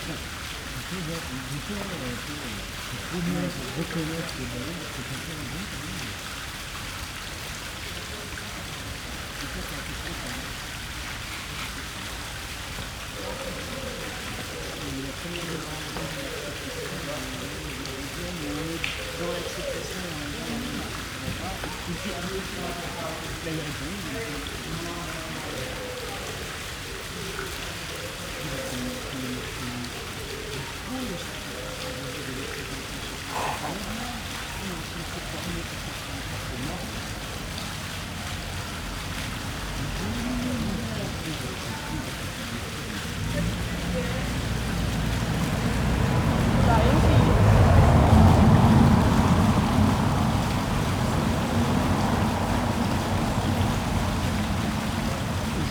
Hotest day in Paris so far in 2022 fountain, people chatting on benches in this green square, Rue Clotaire, Paris, France - Morning atmosphere on the hotest day so far in 2022
Morning atmosphere on what was forecast to be the hotest day in Paris so far in 2022. Extreme temperatures reaching 40C much earlier than usual. A small green square with fountain and people resting or chatting on bendhes. Pigeons call and cars pass.
18 June, ~10:00